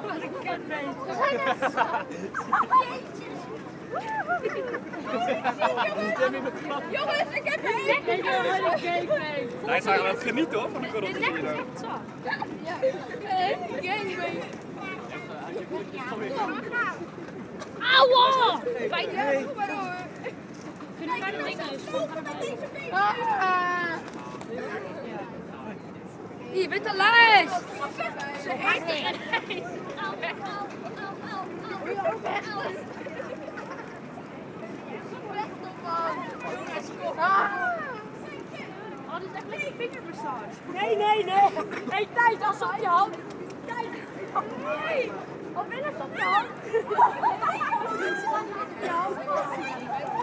Amsterdam, Nederlands - Horses on the central square of Amsterdam
On the central square of Amsterdam, Horses and carriage, tourists shouting and joking.
Amsterdam, Netherlands